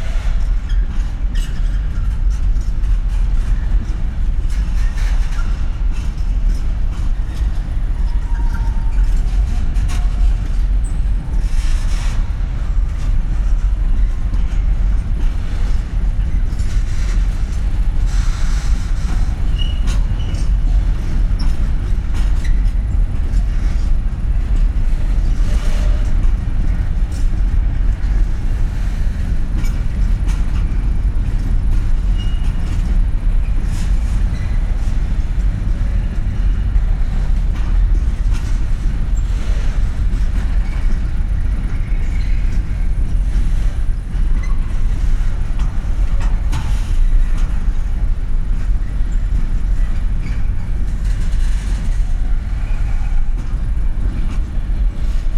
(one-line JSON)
{"title": "Praha, Zizkov, freight train", "date": "2011-06-23 22:30:00", "description": "heavy diesel engines move container waggons around, everything is vibrating", "latitude": "50.09", "longitude": "14.48", "altitude": "258", "timezone": "Europe/Prague"}